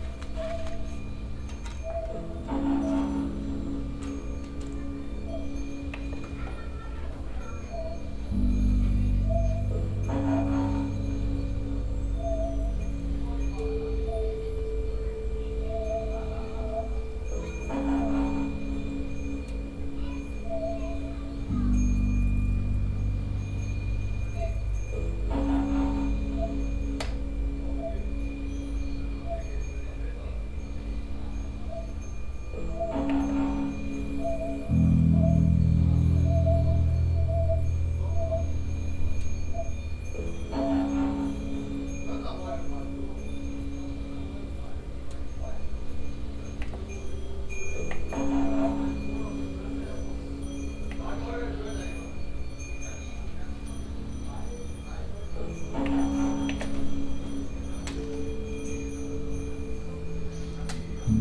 boxberg, transnaturale, installation, klangplateau
soundmap d - social ambiences and topographic field recordings